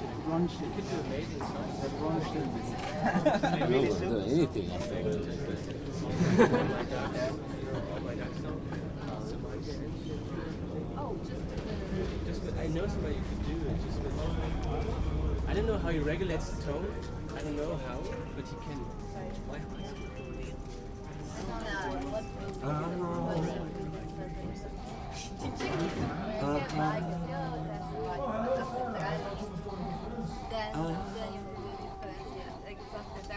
dkfrf @ mittenmang
30.05.2008: dkfrf / das kleine field recording festival @ mittenmang, corner friedel-/lenaustr. relaxed atmoshpere before the concert, funny sounds. performances by Alessandro Bosetti, Soichiro Mitsuya, Michael Northam, Ben Owen